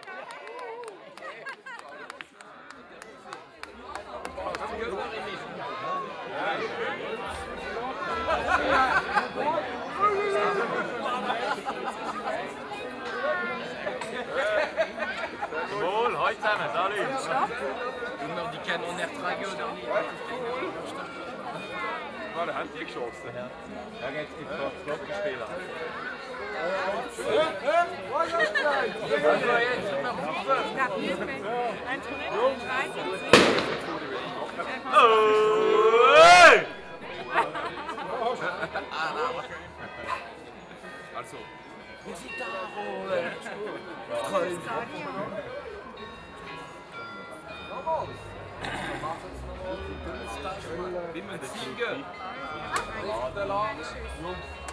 Part of the preperations for Maienzug is the shooting of two canons. People are obviously enjoying this archaic event.